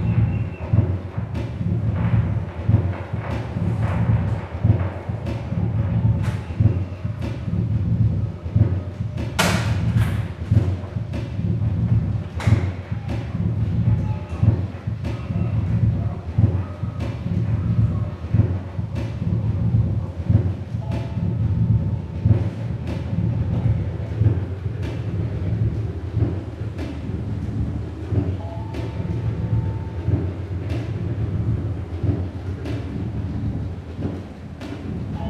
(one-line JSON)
{"title": "gimnazija, Maribor, Slovenia - turntable interpretation", "date": "2012-08-26 13:34:00", "description": "participants in ignaz schick, martin tétrault, and joke lanz's turntable workshop interpret the sounds of the rainstorm happening outside in realtime. the sounds of the rain and thunder can be heard through the open windows.", "latitude": "46.55", "longitude": "15.64", "altitude": "277", "timezone": "Europe/Ljubljana"}